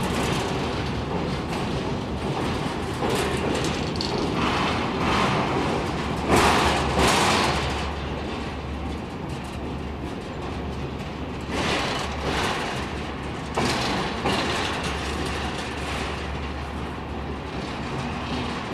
Istanbul - Berlin: Crossing the Danube, passing the Bulgarian - Romanian boarder
The bridge connecting the Romanian and Bulgarian banks of the Danube is of heavy steel. The train passes across the river, tossing long shadows on the water while the sounds of its ponderous weight is ground between rails and wheels.